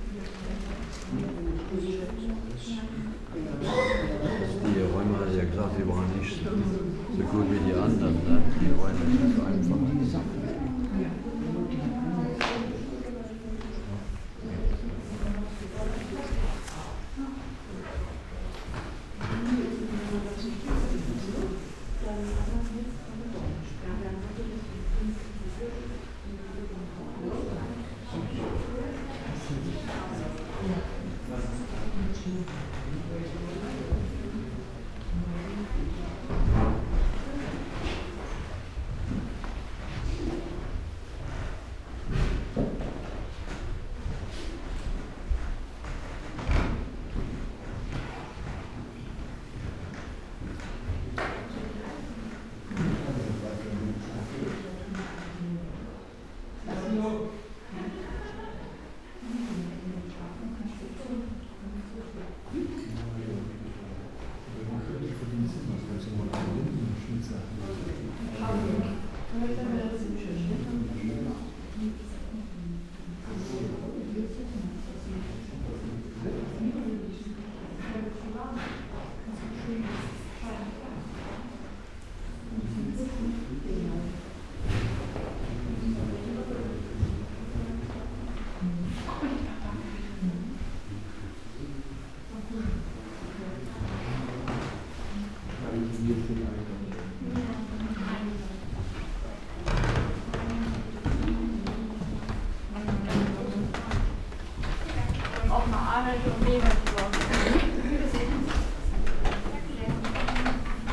Sanssouci, Potsdam, Germany - walk
slow walk through rooms with different kind of wooden floors and parquet, aroundgoers and their steps, whisperings, plastic raincoats and plastic bags for umbrellas